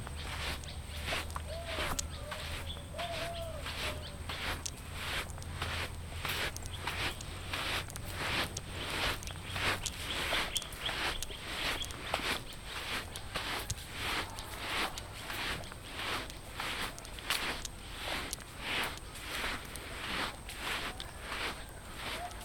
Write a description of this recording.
… part of my daily walk through the bush, from Binga Centre, passed Binga High, up to Zubo office... (...still testing the H2N, we got for the women…. Well, for soundscape recordings at least, a handle of sorts will be advisable…)